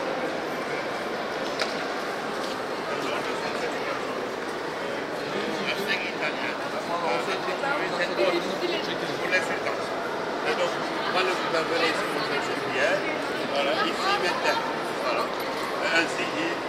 hagia sophia, istanbul - Istanbul, hagia sophia
inside the church, may 2003. - project: "hasenbrot - a private sound diary"